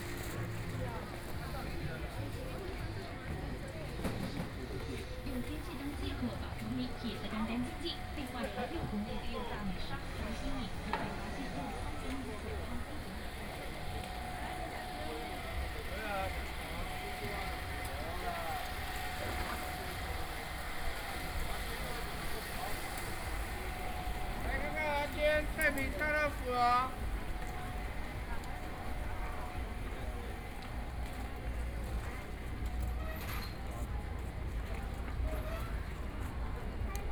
Zhengqi Rd., Taitung City - Night market
walking in the Night market, Snacks, Binaural recordings, Zoom H4n+ Soundman OKM II ( SoundMap2014016 -26)